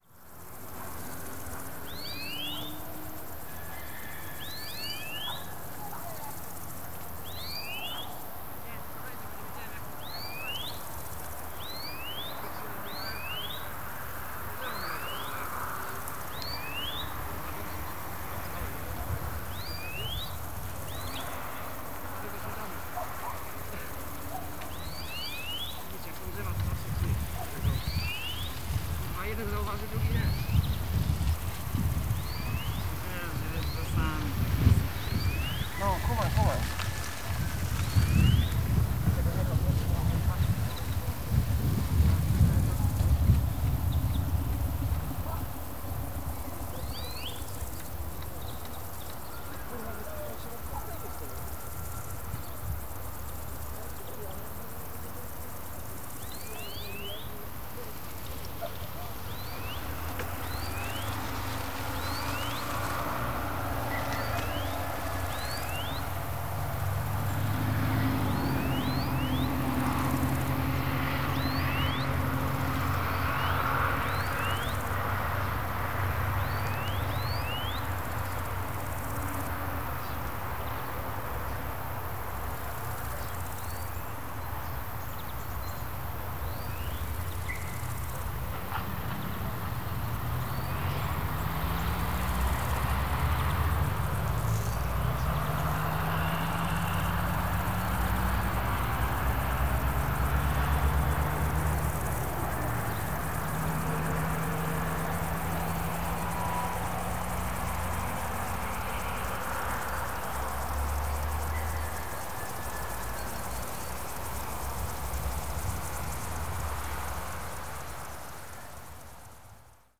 a bird in the trees which chirp was rather spacey. +swarms of crickets + heavy traffic
Poznan, Poland